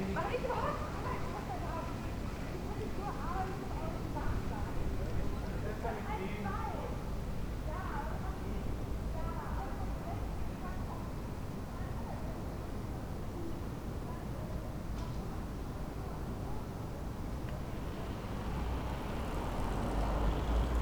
Berlin: Vermessungspunkt Maybachufer / Bürknerstraße - Klangvermessung Kreuzkölln ::: 26.10.2011 ::: 03:01
Berlin, Germany, 26 October